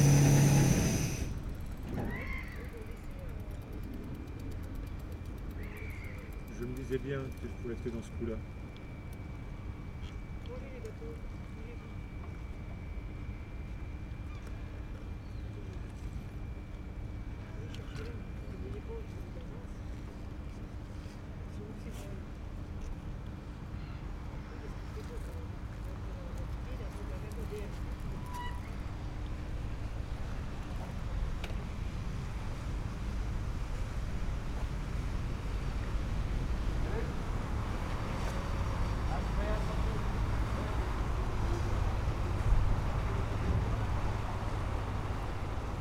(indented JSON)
{
  "title": "Rue de lArmide, La Rochelle, France - Passerelle toute neuve & Confinement 2",
  "date": "2020-11-12 14:17:00",
  "description": "En début d'après midi, piétons, cyclistes et vélos circulent dans un calme remarquable lorsque la passerelle tout récemment refaite s'ouvre.....passent 2 catamarans, puis la passerelle se referme.... Belle écoute!\n4xDPA4022, cinela cosi et Rycotte, SD_MixPré6",
  "latitude": "46.15",
  "longitude": "-1.15",
  "altitude": "1",
  "timezone": "Europe/Paris"
}